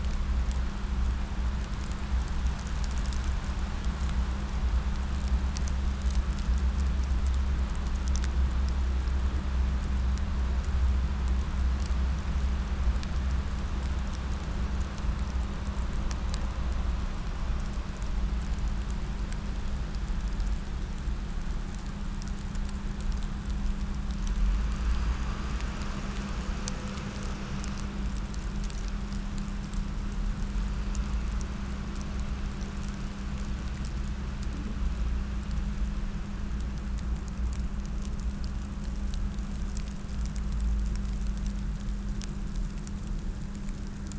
Abbaye de La Cambre, Ixelles/Elsene, Brussels - snow in bush, drone